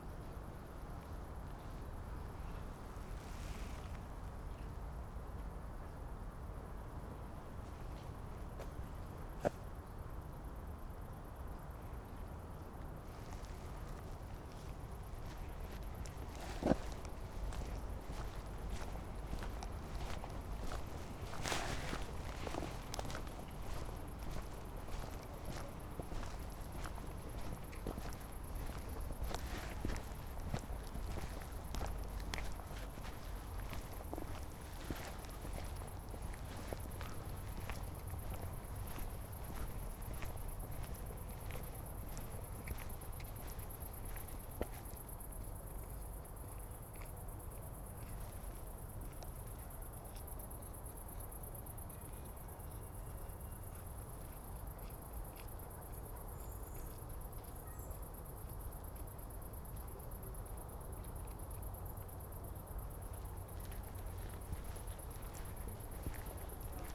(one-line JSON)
{"title": "Berlin Buch, Pankeplatz - walking direction S-Bahn station", "date": "2021-09-05 19:50:00", "description": "Berlin Buch, Pankeplatz, bridge over almost silent river Panke, walking direction S-Bahn station on a Sunday evening in early September\n(Sony PCM D50, Primo EM272)", "latitude": "52.63", "longitude": "13.49", "altitude": "52", "timezone": "Europe/Berlin"}